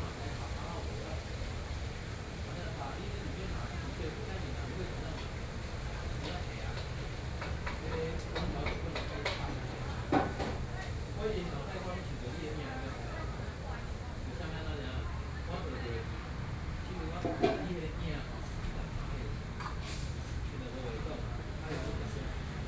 {"title": "Xinshi St., Beitou Dist. - In the restaurant", "date": "2013-12-10 19:15:00", "description": "In the restaurant, Binaural recording, Zoom H6+ Soundman OKM II", "latitude": "25.13", "longitude": "121.50", "altitude": "14", "timezone": "Asia/Taipei"}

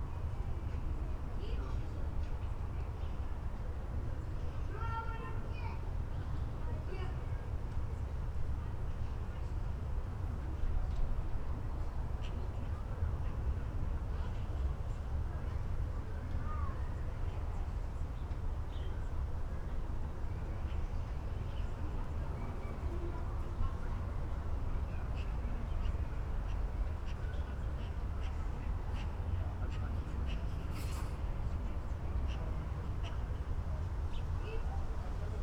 {"title": "Bruno-Apitz-Straße, Berlin Buch, Deutschland - residential area, Sunday evening ambience", "date": "2021-09-05 19:15:00", "description": "Berlin Buch, residential area (Plattenbau), inner yard, domestic sounds, some magpies, dogs, kids playing, Sunday early evening ambience in late Summer\n(Sony PCM D50, Primo EM272)", "latitude": "52.63", "longitude": "13.49", "altitude": "61", "timezone": "Europe/Berlin"}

